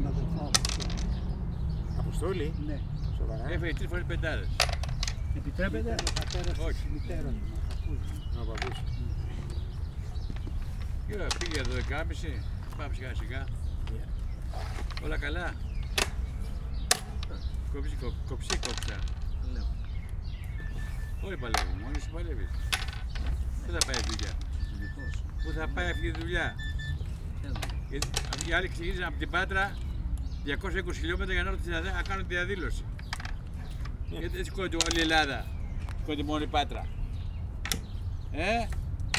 {"title": "Pedios Areos, park, Athen - men playing backgammon", "date": "2016-04-07 12:35:00", "description": "elderly men talking politics and playing backgammon in the shadow of a tree, on a hot spring day.\n(Sony PCm D50, DPA4060)", "latitude": "37.99", "longitude": "23.74", "altitude": "101", "timezone": "Europe/Athens"}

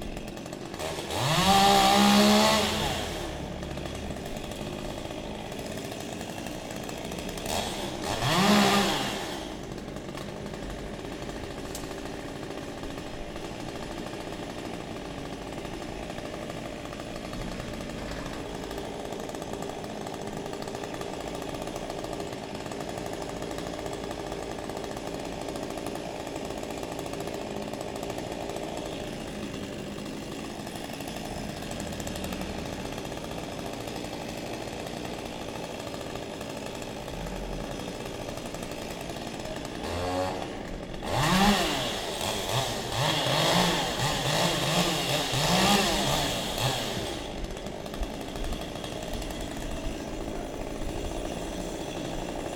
{"title": "Birds in centre The Hague - Cutting down a tree", "date": "2015-02-12 15:58:00", "description": "Cutting down a tree in a densely built place; branch by branch, from top to bottom. This particularly tree was sick and treating to fall on a daycare center.\nThis sound really annoys me every time.", "latitude": "52.08", "longitude": "4.31", "timezone": "Europe/Amsterdam"}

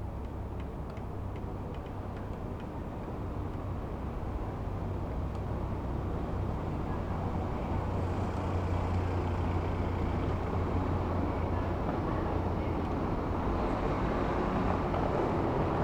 {"title": "Berlin: Vermessungspunkt Friedel- / Pflügerstraße - Klangvermessung Kreuzkölln ::: 04.06.2010 ::: 00:01", "date": "2010-06-04 00:01:00", "latitude": "52.49", "longitude": "13.43", "altitude": "40", "timezone": "Europe/Berlin"}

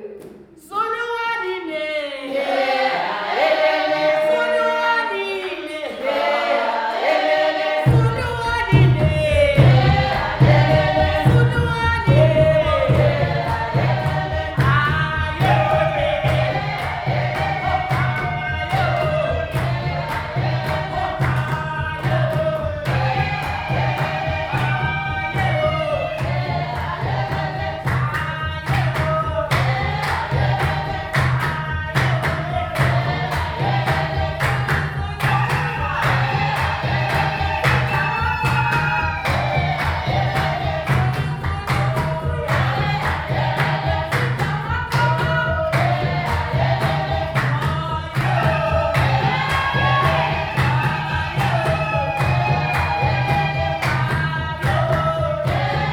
{"title": "Community Hall, Matshobana, Bulawayo, Zimbabwe - A Rainmaking Song...", "date": "2013-10-30 10:40:00", "description": "This excerpt of a rainmaking song will take you in to a rehearsal of the Thandanani Women’s Ensemble. Imagine a group of about a dozen women in their 40s, 50s and 60s engaged in a most energetic dance and song…\nThe Thandanani Women’s Ensemble was formed in 1991 by women in their 40s and 50s most of them from Mashobana township. The initial idea was to enjoy their arts and culture together and to share it with the young generation, thus the Ndebele word “thandanani” means, loving one another. The group is well known for their vibrant performances in traditional song and dance, established in the national arts industry and well versed as accapella performers in recordings and performers in film.\nYou can find the entire list of recordings from that day archived here:", "latitude": "-20.14", "longitude": "28.55", "timezone": "Africa/Harare"}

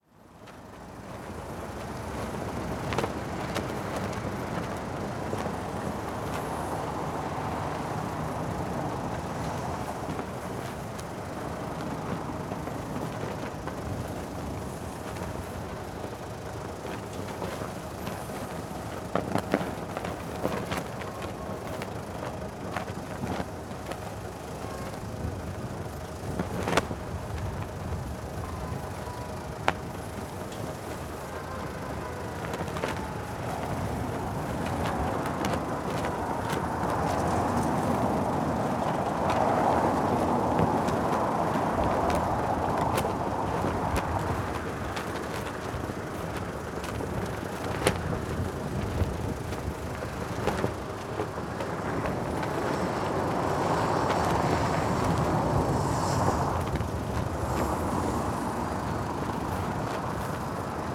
{"title": "Lisbon, Amália Rodrigues Garden - flag, bus face off", "date": "2013-09-26 11:26:00", "description": "a vast Portuguese flag yanking in the strong wind. just by listening to the flapping sound one can imagine how heavy the flag is. tourist bus idling nearby. finally leaving, emptying some space for the flag to sound.", "latitude": "38.73", "longitude": "-9.15", "altitude": "111", "timezone": "Europe/Lisbon"}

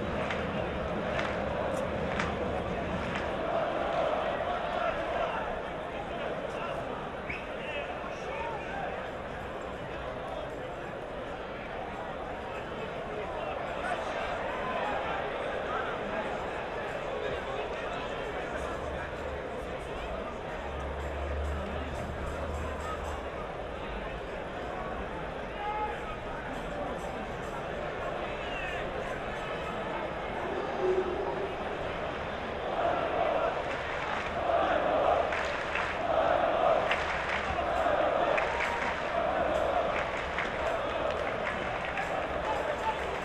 {"title": "Maribor, stadium, soccer match - Maribor - Dudelange", "date": "2012-08-01 21:05:00", "description": "Champions League match between Maribor and Dudelange / Luxembourg, 4:1\n(SD702 Audio Technica BP4025)", "latitude": "46.56", "longitude": "15.64", "altitude": "277", "timezone": "Europe/Ljubljana"}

